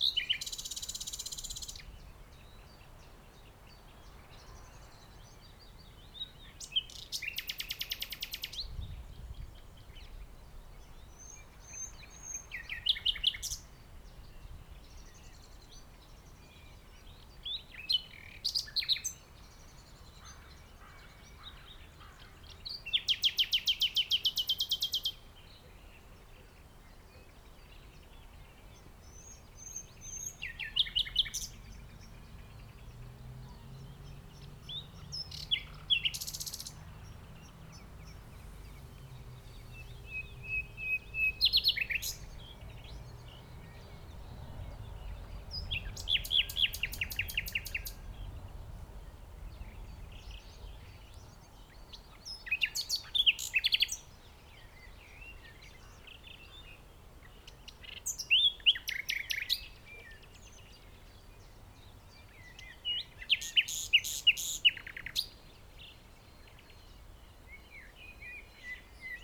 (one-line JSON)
{"title": "Den Haag, Markenseplein, Den Haag, Nederland - Bird and joggers in the dunes", "date": "2021-05-12 07:47:00", "description": "Bird and joggers in the dunes.\nRecorded with Zoom H2 with additional Sound Professionals SP-TFB-2 binaural microphones.", "latitude": "52.09", "longitude": "4.25", "altitude": "13", "timezone": "Europe/Amsterdam"}